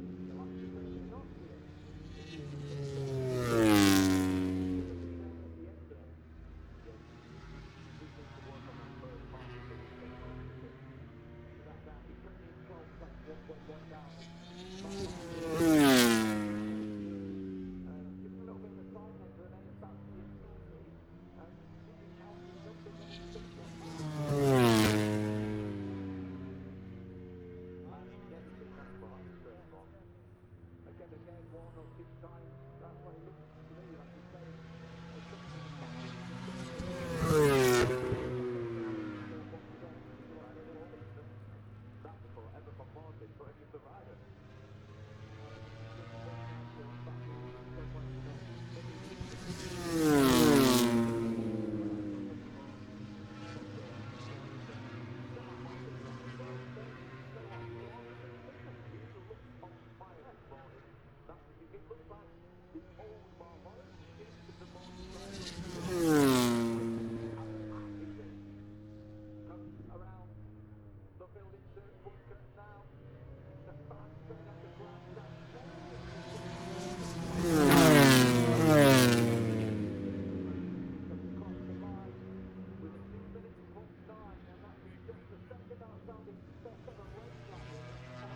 Silverstone Circuit, Towcester, UK - british motorcycle grand prix 2021 ... moto grand prix ...
moto grand prix free practice one ... maggotts ... olympus ls 14 integral mics ...